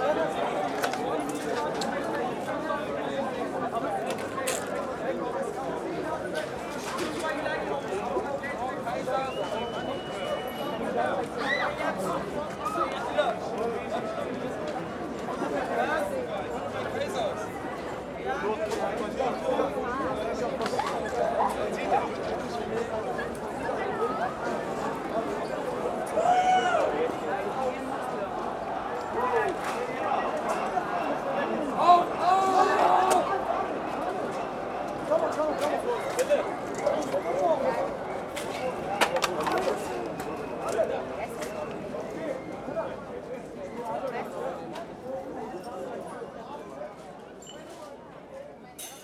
berlin, skalitzer straße: 1st may soundwalk (5) - the city, the country & me: 1st may soundwalk (5)
1st may soundwalk with udo noll
the city, the country & me: may 1, 2011